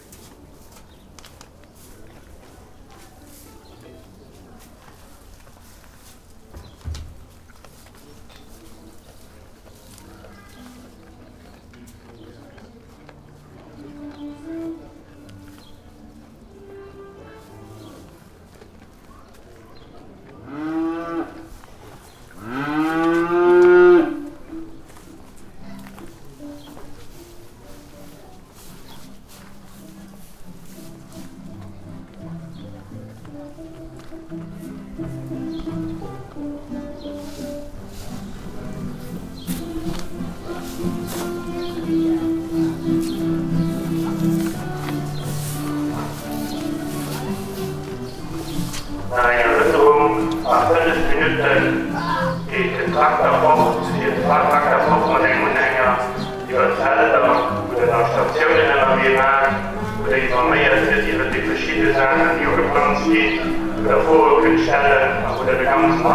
On a farm yard at the outer cow sheds. Cows calling and moving on hay. Music by a mobile, acoustic music duo, an amplified announcement in local dialect and some visitors passing by talking.
Hupperdange, Bauernhof
Auf einem Bauernhof bei der äußeren Kuhscheune. Kühe muhen und bewegen sich auf Heu. Musik von einem Handy, akustisches Musikduo, eine verstärkte Durchsage im regionalen Dialekt und einige Besucher, die redend vorbeilaufen.
Hupperdange, ferme
Dans une ferme, près de l’étable extérieure pour les vaches. Les vaches meuglent et se déplacent sur la paille. La musique d’un téléphone portable, un duo musical acoustique, une annonce forte dans le dialecte régional et quelques visiteurs qui passent en discutant.
Project - Klangraum Our - topographic field recordings, sound objects and social ambiences
Heinerscheid, Luxembourg, 2 August 2011